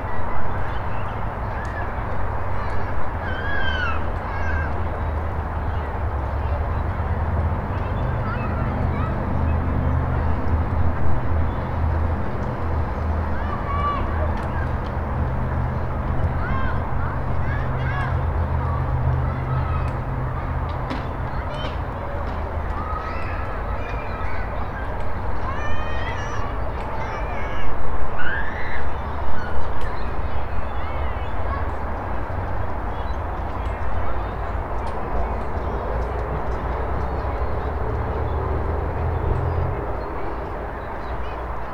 Roswell Rd, Marietta, GA, USA - East Cobb Park: Gazebo
The East Cobb Park recorded from the wooden gazebo by the parking lot. Children playing, people walking around the park, traffic sounds, etc. Recorded with the Tascam dr-100mkiii and a dead cat windscreen.
Georgia, United States of America, February 2020